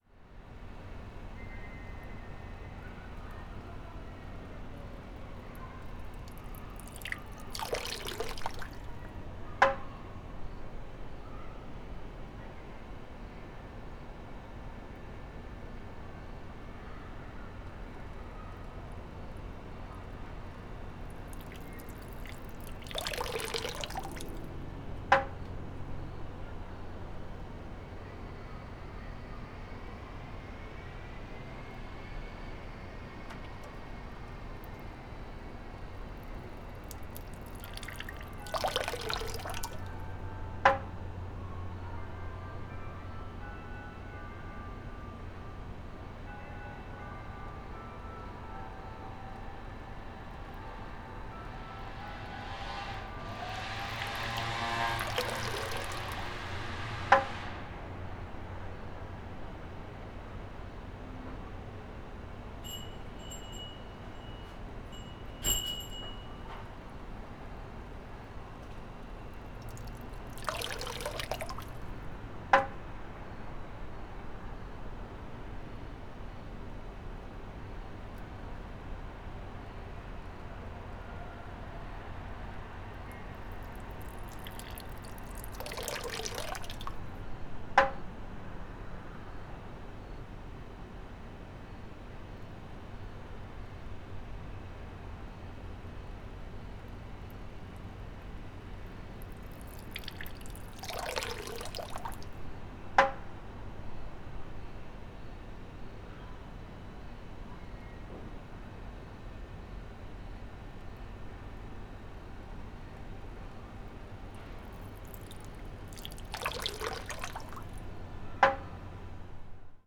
koishikawa korakuen gardens, tokyo - shishi-odoshi
bamboo tubes, water flow, garden closed